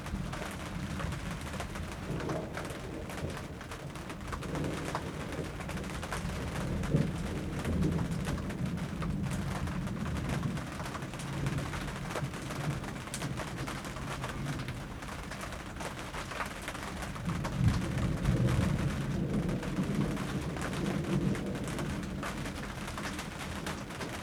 workum, het zool: marina, berth h - the city, the country & me: marina, aboard a sailing yacht
thunderstorm, rain hits the tarp
the city, the country & me: june 28, 2011